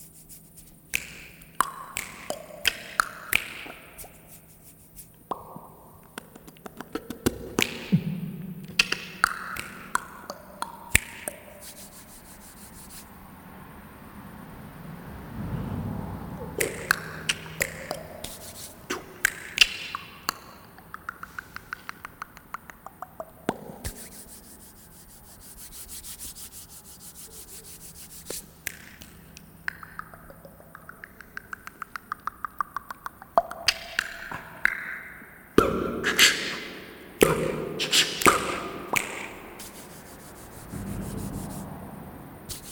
Maribor, Koroska cesta, Vinarjski potok - Jamming with location / triggering acoustics of an under street passage
No artificial processing, just playing with interesting naturally occuring echoes of a sub street passage.